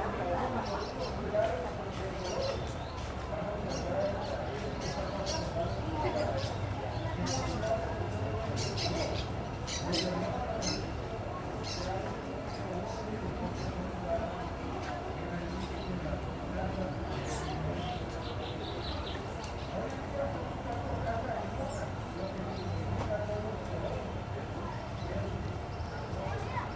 Albarrada, Mompós, Bolívar, Colombia - Barco
Un planchón turístico pasa por el río. Unos niños en la rivera persiguen a un buitre herido.